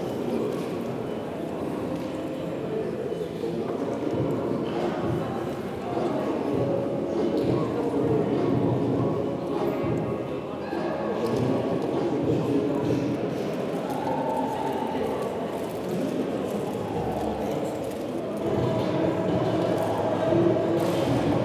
Charleroi, Belgique - Drug addicts
In front of the Charleroi station, some drug addict people discussing. French speaking, they discuss about what the had stolen in various stores. One says : I'm a very quiet person but I'm very violent. After, it's a walk into the station, with some glaucous music reverberating. At the end on the platform, a train is leaving to Namur.